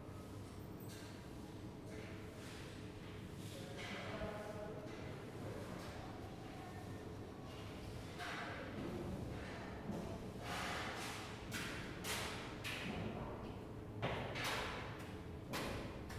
Stare Miasto, Kraków, Polska - Courtyard
Jagiellonian University's History of Art department's courtyard during a small maintenance.
Krakow, Poland, 31 July 2014